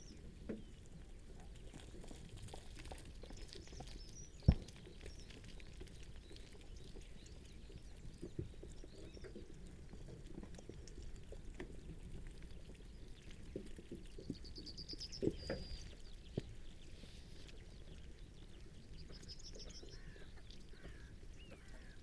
Luttons, UK - Not many baas at breakfast ...
Not many baas at breakfast ... sheep flock feeding from troughs ... some coughing and snorts from the animals ... occasional bleats towards end ... recorded using a parabolic ... bird calls from ... pied wagtail ... blue tit ... tree sparrow ... Skylark ... rook ... crow ... meadow pipit ... pheasant ... song thrush ... yellowhammer ...